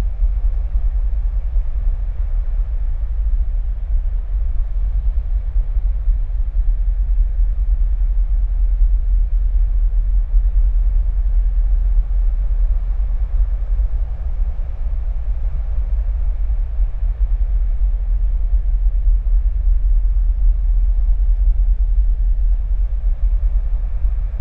Cadzand, Nederlands - Container ships
Complicate sound. While I was sleeping here at night, I wished to record the deaf sound of the uninterrupted ballet of container ships. In aim to smother the sea sound and to maximize the sound of the boats, I put the recorder inside the sleeping bag and put volume level to high. Result is a strange sound, probably not exactly the truth, but notwithstanding representative.